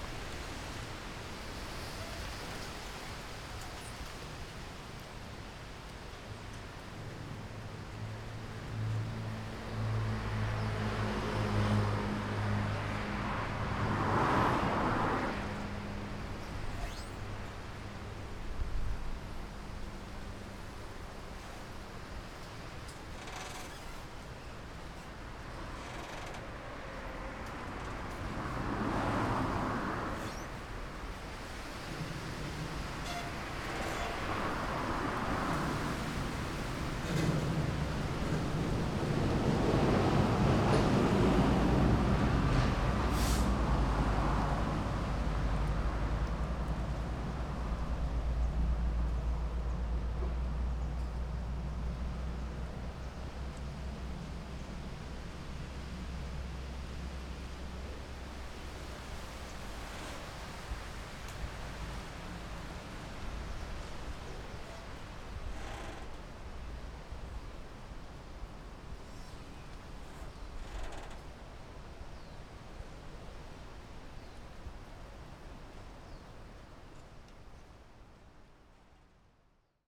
菜園溼地公園, Magong City - Wetland Park
In the Wetland Park, Traffic Sound, Birds singing, Forest
Zoom H6 +Rode NT4
23 October 2014, 11:16